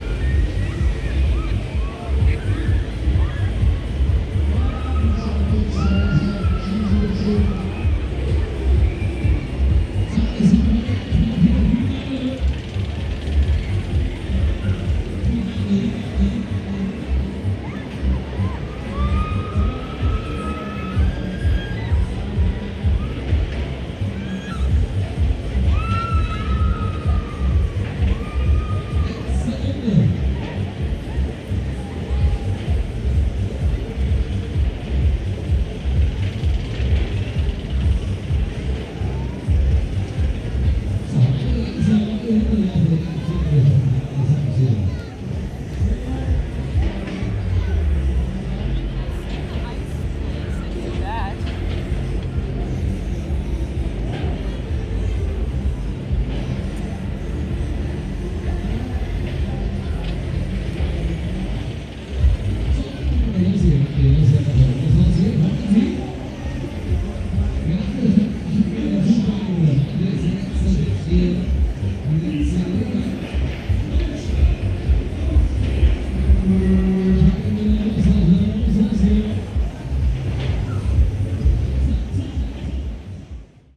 Volkspark Hasenheide, Berlin, Deutschland - Volkspark Hasenheide, Berlin - Maifest (fun fair) ambience
Volkspark Hasenheide, Berlin - Maifest (fun fair) ambience. [I used the Hi-MD recorder Sony MZ-NH900 with external microphone Beyerdynamic MCE 82]
Berlin, Germany, 20 May 2012